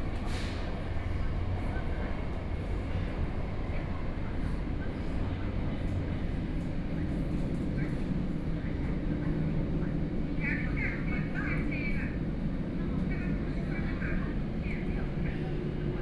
Yangpu District, Shanghai - Line 10 (Shanghai Metro)
from Wujiaochang station to East Yingao Road station, Binaural recording, Zoom H6+ Soundman OKM II
Shanghai, China, 25 November 2013, ~12:00